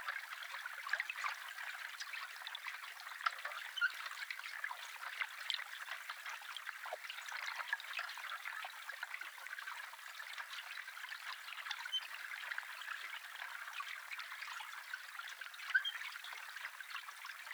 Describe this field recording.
Underwater recording using 2 hydrophones. Very windy day.